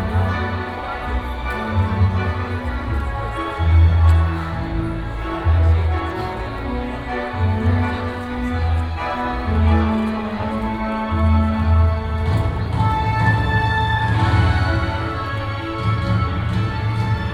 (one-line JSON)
{"title": "太麻里地區農會, Taimali Township - Farmers festival activities", "date": "2018-03-28 10:17:00", "description": "Farmers' festival activities\nBinaural recordings, Sony PCM D100+ Soundman OKM II", "latitude": "22.61", "longitude": "121.00", "altitude": "19", "timezone": "Asia/Taipei"}